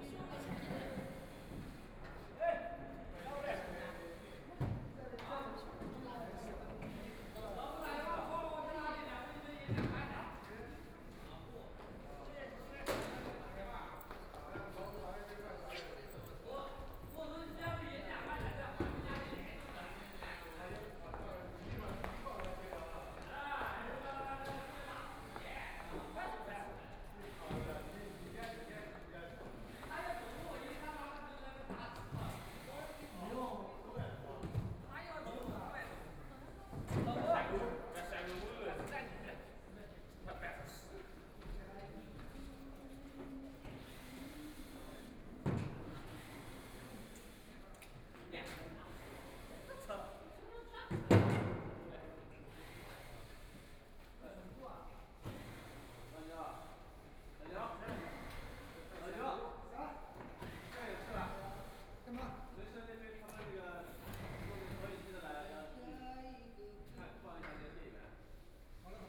Huangpu District, Shanghai - Erection of walls
Construction workers are arranged exhibition, the third floor, The museum exhibition is arranged, Binaural recording, Zoom H6+ Soundman OKM II (Power Station of Art 20131129-1)
Huangpu, Shanghai, China, 29 November